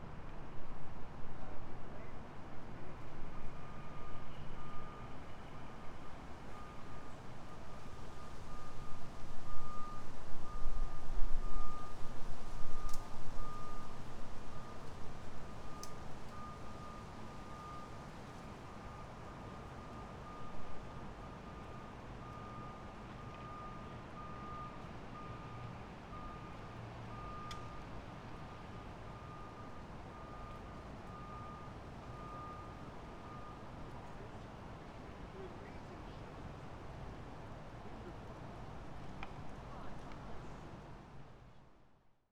Oakland, CA, USA - The Empty Pond